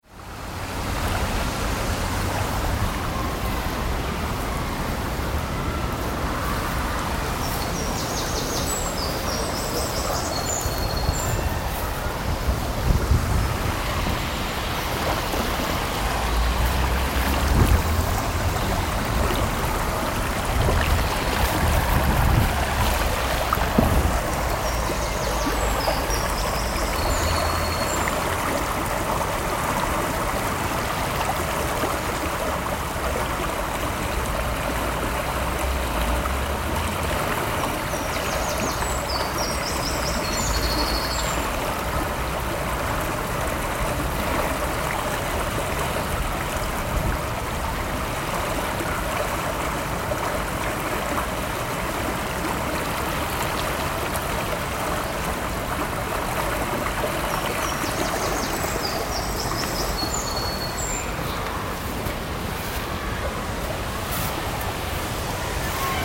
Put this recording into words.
recorded june 23rd, 2008. project: "hasenbrot - a private sound diary"